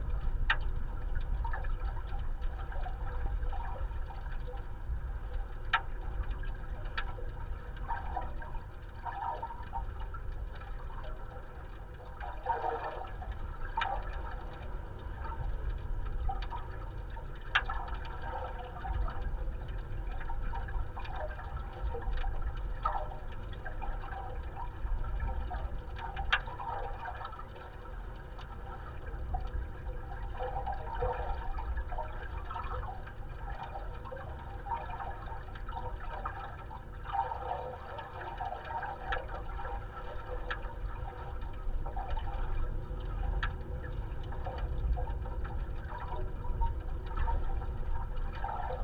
Kos, Greece, metallic construction
contact microphone recordings